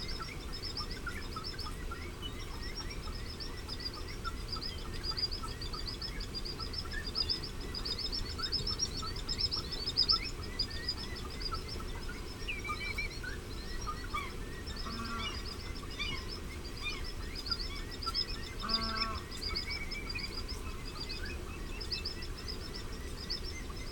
Dervaig ... lochan soundscape ... bird calls ... mallard ... greylag ... grey heron ... curlew ... redshank ... oystercatcher ... common sandpiper ... also curlew and redshank in cop ... wet and windy ... parabolic to Sony minidisk ...